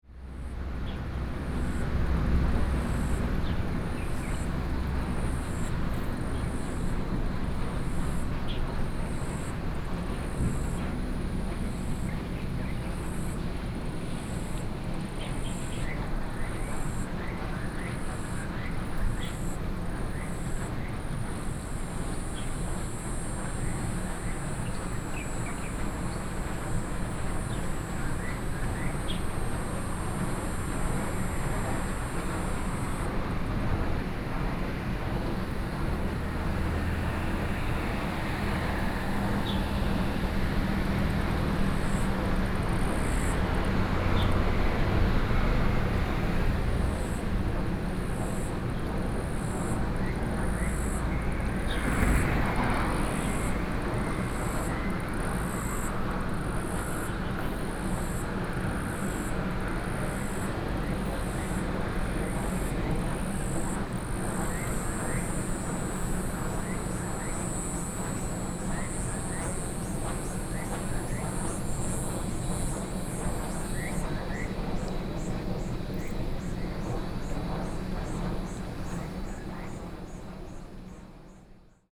石門區尖鹿里, New Taipei City - Bird and Wind Turbines
Traffic Sound, Bird calls, Wind Turbines
Sony PCM D50 + Soundman OKM II
New Taipei City, Taiwan